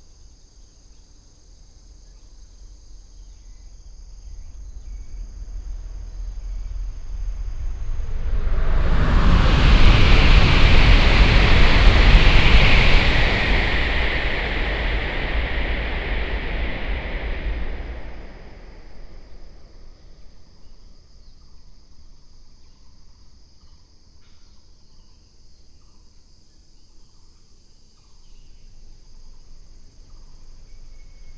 Xinshan Rd., Baoshan Township - In the woods
In the woods, Bird call, Insect sounds, Near the high speed railway, High-speed train passing through, Binaural recordings, Sony PCM D100+ Soundman OKM II
Hsinchu County, Taiwan, 15 September 2017